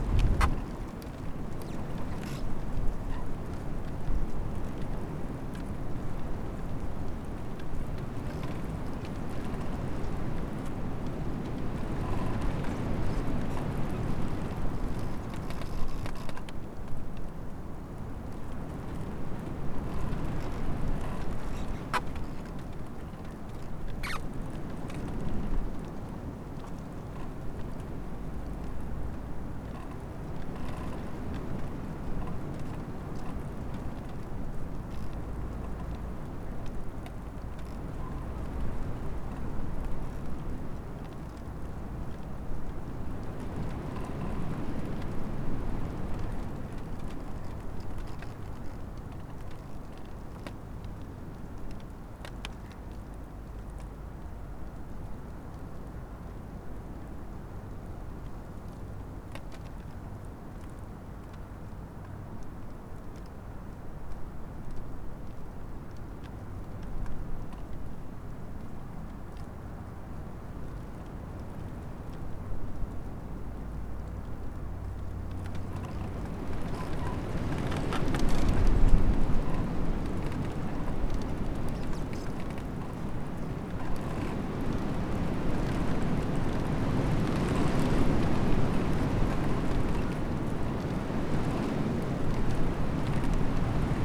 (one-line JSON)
{"title": "lancken-granitz: holunderbaum - the city, the country & me: elder tree", "date": "2013-03-08 17:52:00", "description": "same tree next day\nthe city, the country & me: march 8, 2013", "latitude": "54.36", "longitude": "13.65", "altitude": "3", "timezone": "Europe/Berlin"}